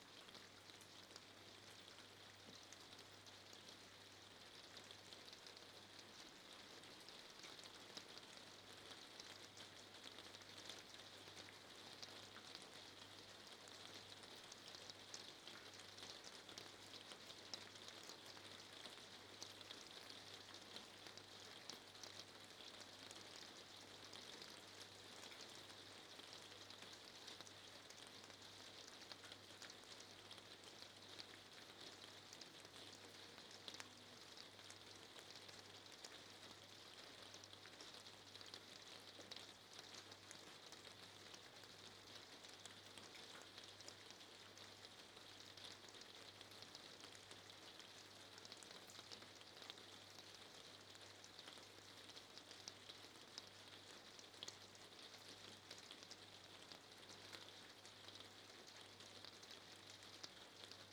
Pl. de la Gare, Houdain, France - Houdain - Pluie
Houdain (Pas-de-Calais)
Premières pluies d'automne.
sur le toit de la terrasse (surface plastique/plexiglass)
ZOOM F3 + Neumann KM 184